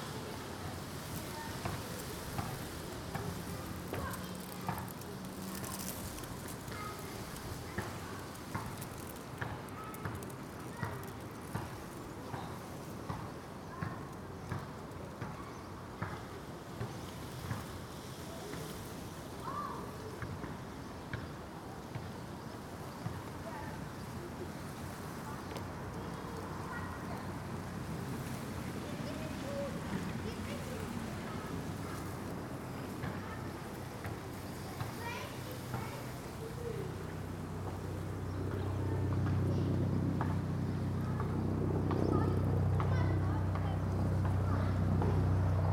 Contención Island Day 75 outer northeast - Walking to the sounds of Contención Island Day 75 Saturday March 20th
The Poplars Roseworth Avenue The Grove Moor Road North St Nicholas Avenue Rectory Grove
The street footballers bounce and shout
helicopter drones
below a single con-trail
The beech hedge glows
burnished copper
a mother and son run laps